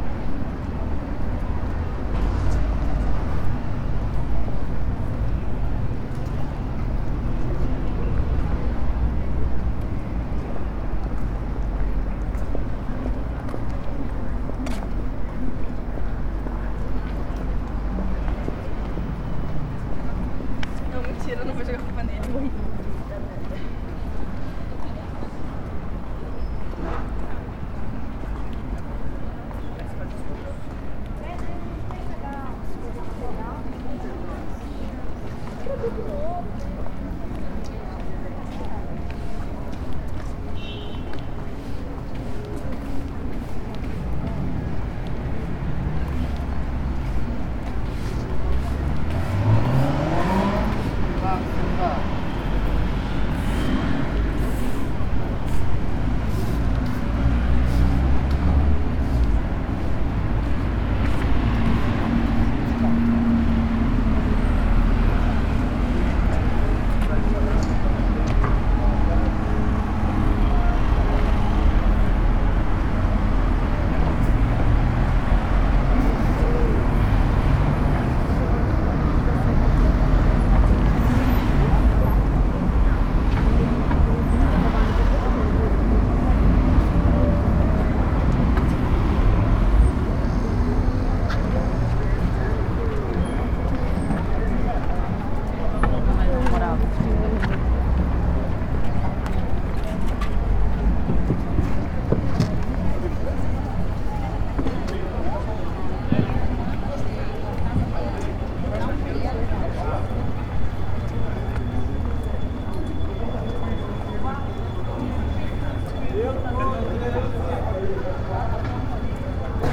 Caminhada - Centro, Londrina - PR, Brasil - Calçadão: caminhada sonora 23/05/16
Caminhada sonora realizada e gravada no Calçadão de Londrina, Paraná.
Categoria de som predominante: antropofonia (vozes, veículos, anúncios, músicos de rua, vendedores ambulantes...).
Condições do tempo: ensolarado, vento, frio.
Hora de início: 14h17.
Equipamento: Tascam DR-05.
Soundtrack performed and recorded on the Boardwalk in Londrina, Paraná.
Predominant sound category: antropophony (voices, vehicles, advertisements, street musicians, street vendors ...).
Weather conditions: sunny, wind, cold.
Start time: 2:17 p.m.